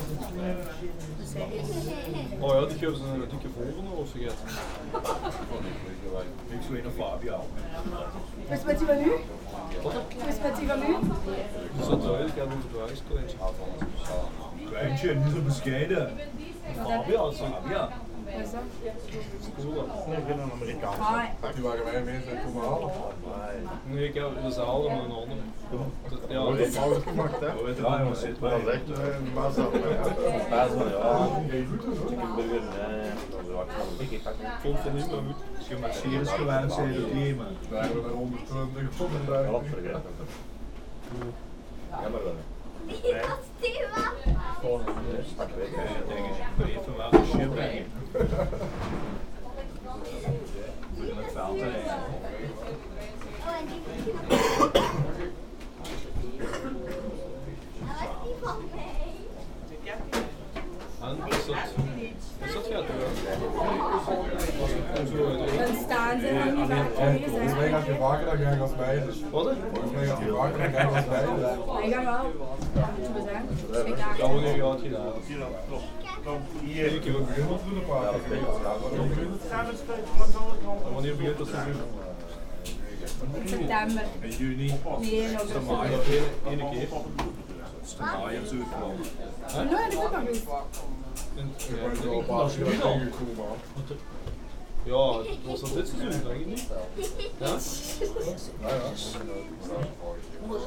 In the Vroenhoven frituur, a chip shop called Geronimo. It's very very small and very very busy ! From 0:00 to 2:00 mn, people are ordering. It's so quiet, you can't imagine it's crowded ! After 2:20 mn, people are eating and it's more animated. It's an handwork chip shop and it's a good place, where local people massively go.
Riemst, Belgium, January 2018